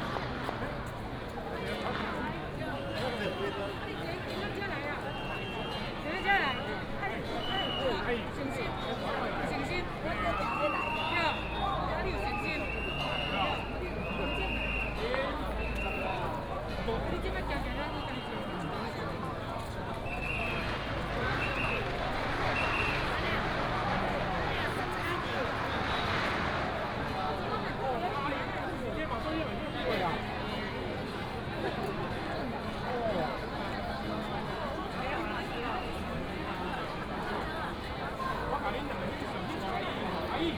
白沙屯, Tongxiao Township, Miaoli County - Matsu Pilgrimage Procession
Matsu Pilgrimage Procession, Crowded crowd, Fireworks and firecrackers sound